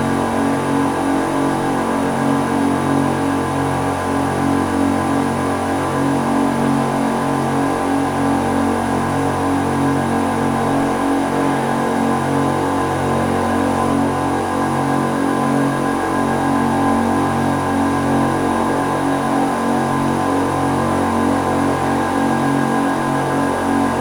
neoscenes: Artspace, TradeAir
TradeAir installation opening by JAMES CHARLTON